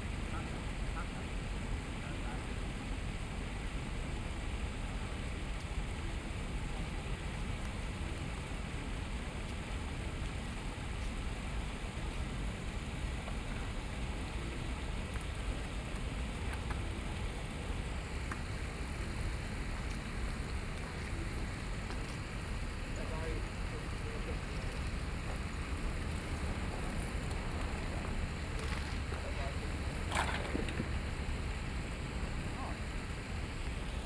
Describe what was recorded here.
Traffic Sound, Standing estuary, The sound of water, Angler, Running sound, Bicycle through, Environmental sounds, Binaural recordings